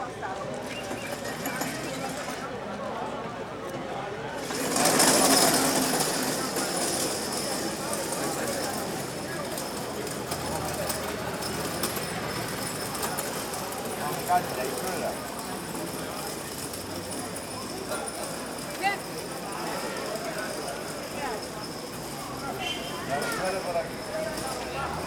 Santigo de Cuba, delante de mercado
Santiago de Cuba, Cuba, 8 August 2004, ~18:00